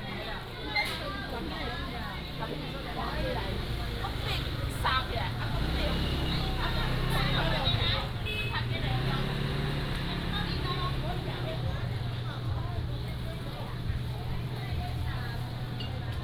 Bo’ai St., Miaoli City - Walking in the Street
Walking in the traditional market, Market selling sound, motorcycle, sound of birds